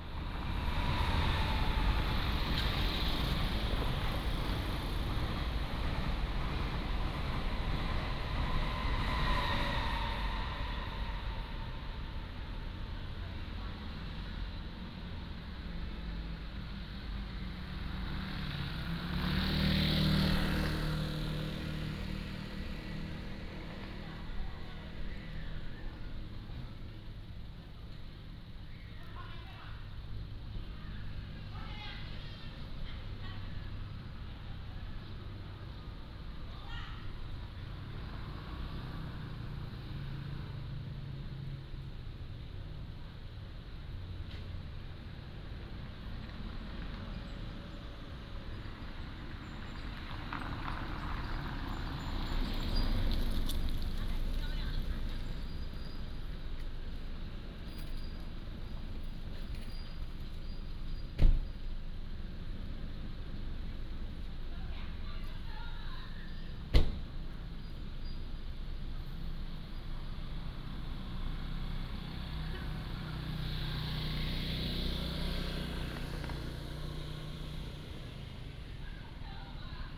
Station square, Traffic sound, The train passes through
Tongluo Township, Miaoli County, Taiwan, 16 February